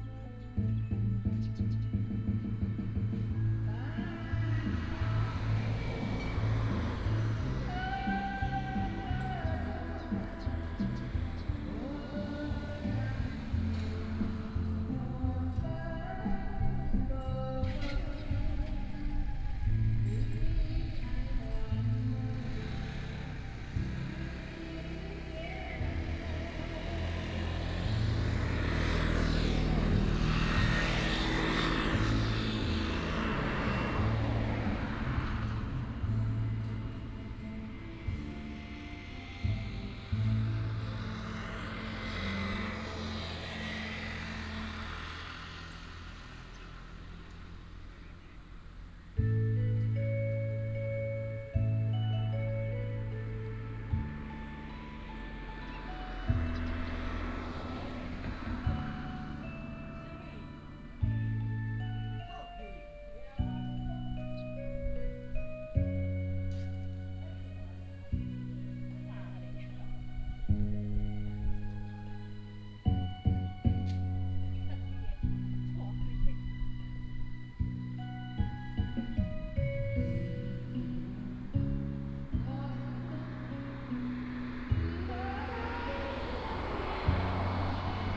小墾丁度假村, 滿州鄉屏東縣 - Shop by the highway
Bird cry, Traffic sound, Shop by the highway, Karaoke, Dog barking